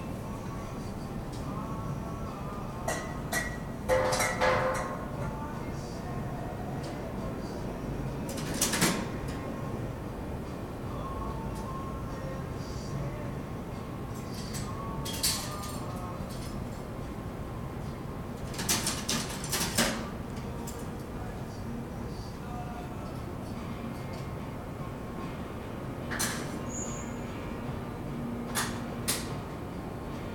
Köln, Maastrichter Str., backyard balcony - neue maas 14, midnight
24.04.2009 00:00 night ambience: radio, kitchen noise, midnight news
Köln, Deutschland, 24 April